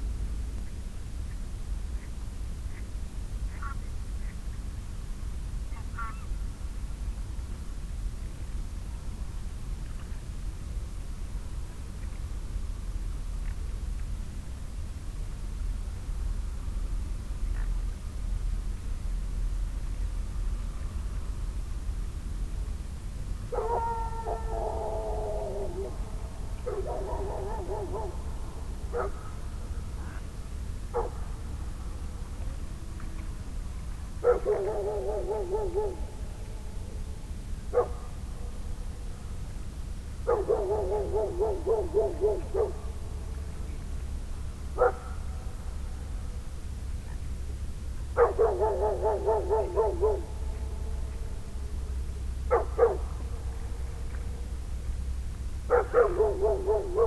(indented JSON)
{
  "title": "lancken-granitz: wiese - the city, the country & me: meadow",
  "date": "2013-03-05 19:28:00",
  "description": "wild geese and echoing dog\nthe city, the country & me: march 5, 2013",
  "latitude": "54.36",
  "longitude": "13.65",
  "timezone": "Europe/Berlin"
}